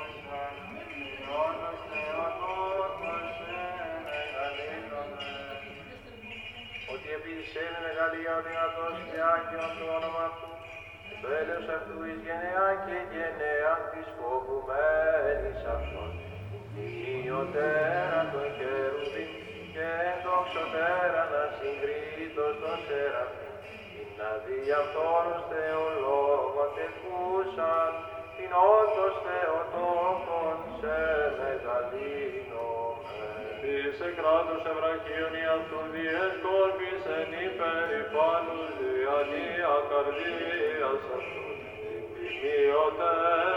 Επαρ.Οδ. Φιλώτας - Άρνισσα, Αντίγονος 530 70, Ελλάδα - Midnight Church Mass
Record by: Alexandros Hadjitimotheou
10 August 2021, 00:30